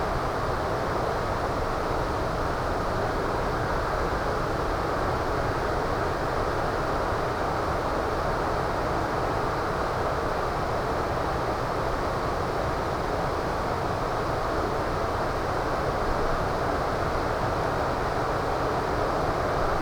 recorded close to midnight from a terrace overlooking the setesdal valley - heard are wind, a waterfall (about 1km away, but fully visible) and very occasionally a passing bird.
Norway, 18 July 2012